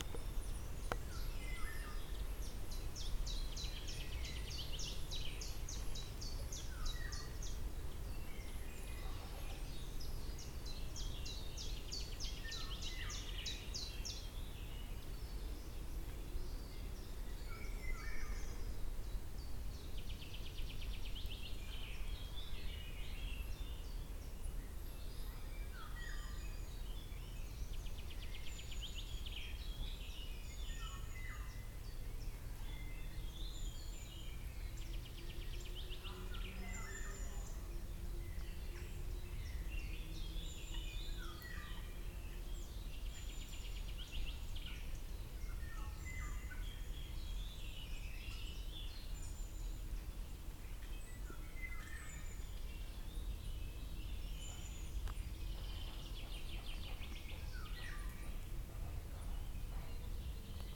Birds chirping on a lazy spring afternoon, at this natural getaway from the small city of Dessau. Recorded on Roland R-05.